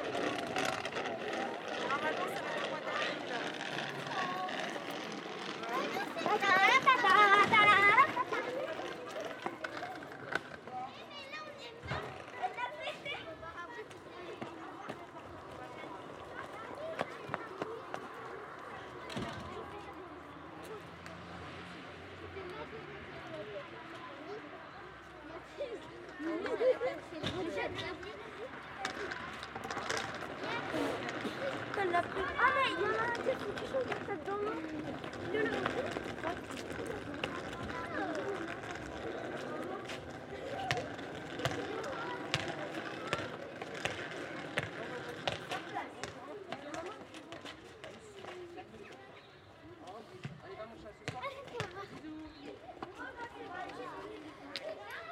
Le Bourg, Champsecret, France - Arrivée à lécole
It's school time, children pull suitcases on wheels, take balloons. The first moments in school are made of games.